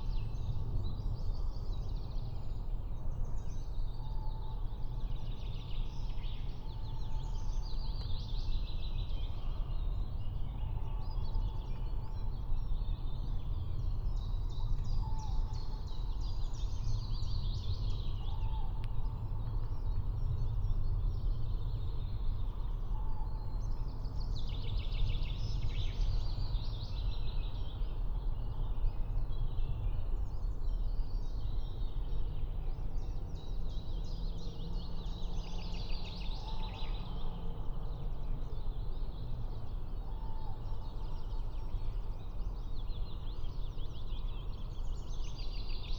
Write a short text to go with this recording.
early morning at the Löcknitz, a small river in east germany. attracted by the calls of bird i could not identify. it's a pity that there's a constant rumble of aircrafts, and distant freight train traffic. no such thing like silence... (SD702, MKH8020 AB)